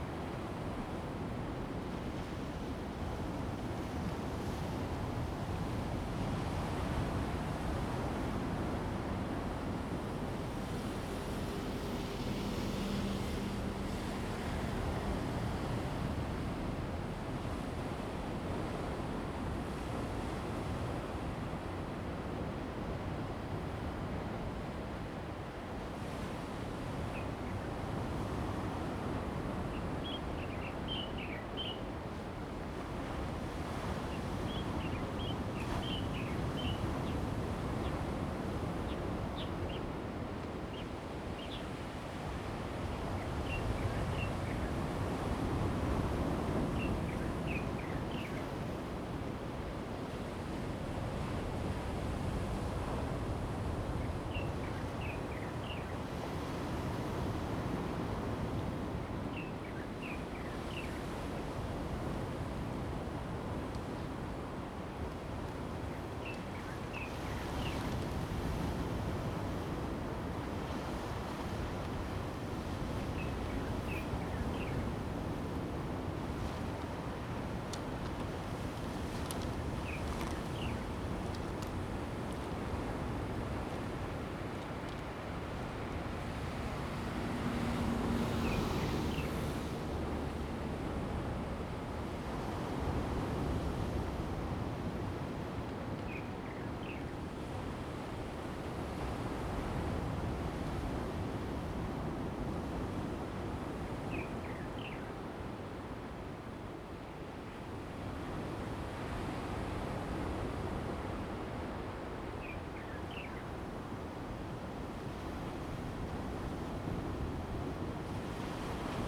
八瑤灣 Bayao bay, Manzhou Township - On the coast
On the coast, wind, Sound of the waves, birds sound
Zoom H2n MS+XY